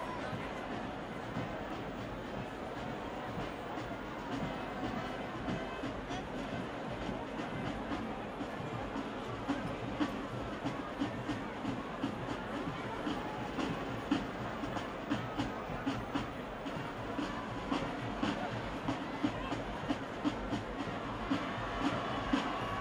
Zürich, Bellevue, Schweiz - Böllerschüsse
Die Zünfte treffen bei dem Sechseläuteplatz ein. Volk, Böllerschüsse.
Sechseläuten ist ein Feuerbrauch und Frühlingsfest in Zürich, das jährlich Mitte oder Ende April stattfindet. Im Mittelpunkt des Feuerbrauchs steht der Böögg, ein mit Holzwolle und Knallkörpern gefüllter künstlicher Schneemann, der den Winter symbolisiert.